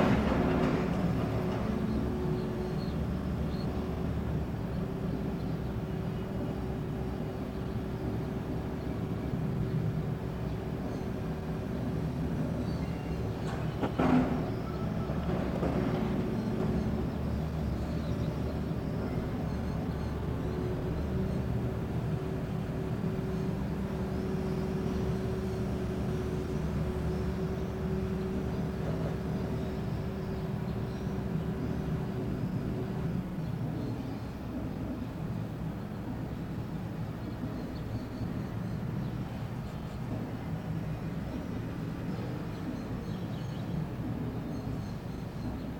Ben Yehuda Street while demolishing a building from a balcony in the 3rd floor.
recorder by zoom f1. friday noon.